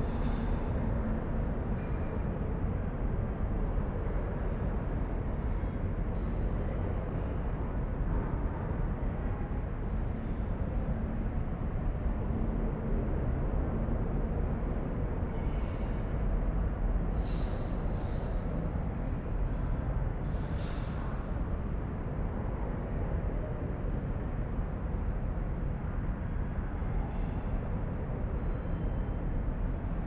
Mannesmannufer, Düsseldorf, Deutschland - Düsseldorf, KIT, exhibition hall, tube entry
Inside the under earth exhibition hall near the entry. The sound of the Rheinufertunnel traffic reverbing in the tube like architecture construction. Also to be heard: sounds from the cafe kitchen above.
This recording is part of the intermedia sound art exhibition project - sonic states
soundmap nrw - sonic states, social ambiences, art places and topographic field recordings
Düsseldorf, Germany, 22 November